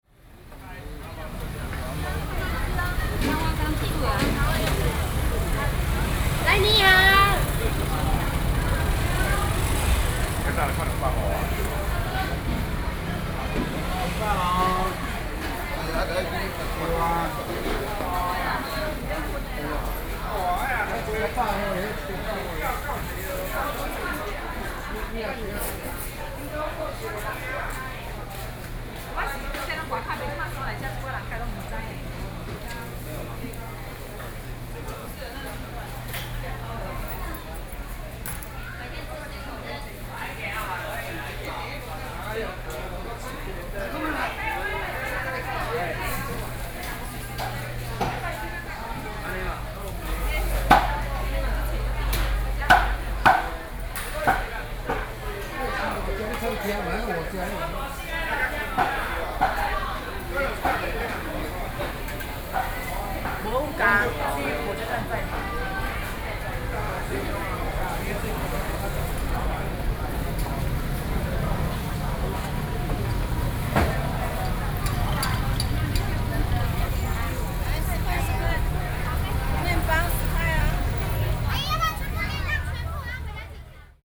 Traditional vegetable market, Binaural recordings, ( Sound and Taiwan - Taiwan SoundMap project / SoundMap20121115-28 )